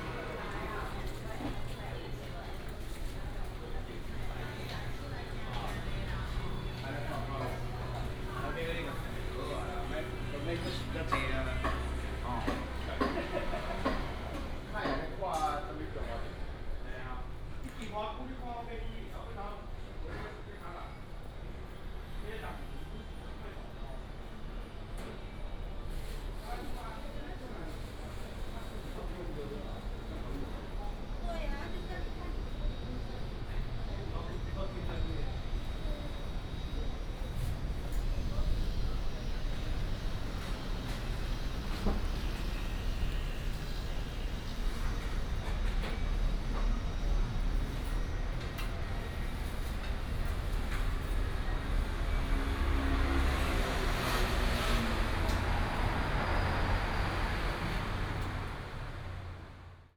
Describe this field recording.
Walking through the market, Walking in a small alley, Traditional small market